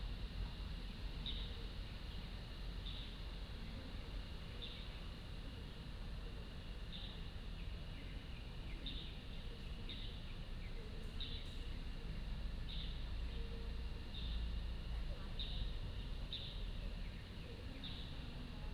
National Chi Nan University, Puli Township - In the Plaza
In the school's Square, Birdsong
Puli Township, Nantou County, Taiwan, 30 April 2015, 14:38